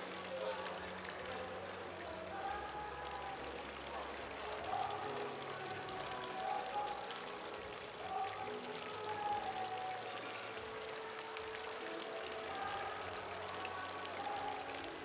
L'Aquila, Santa Maria Paganica - 2017-06-08 06-Pzza S.Maria Paganica
L'Aquila AQ, Italy, 8 June 2017, 23:00